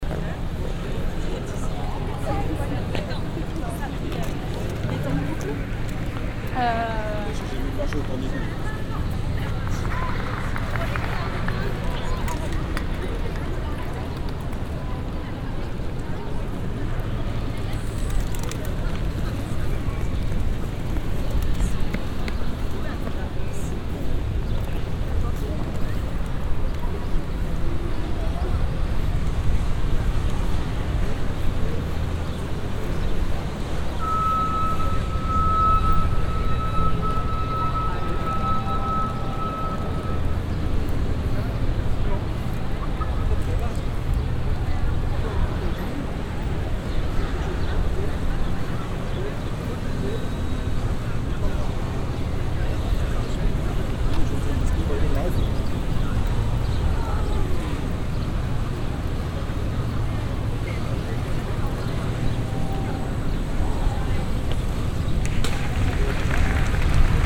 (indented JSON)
{
  "title": "paris, place georges pompidou",
  "date": "2009-10-16 15:22:00",
  "description": "huge place in front of the centre pompidou museum, crowded by an international group of visitors, street musicians, comedians, painters and acrobats. a pavement cleaning machine passing by.\ninternational cityscapes - sociale ambiences and topographic field recordings",
  "latitude": "48.86",
  "longitude": "2.35",
  "altitude": "46",
  "timezone": "Europe/Berlin"
}